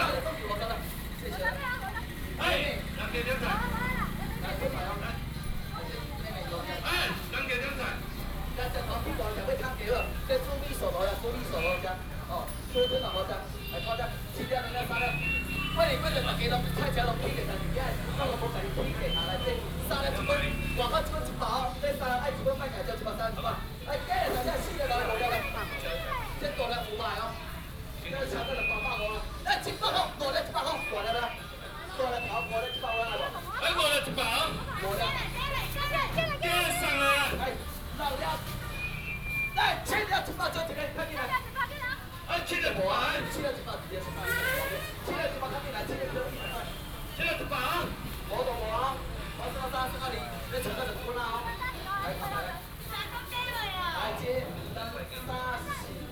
Seafood selling
Binaural recordings
Sony PCM D100+ Soundman OKM II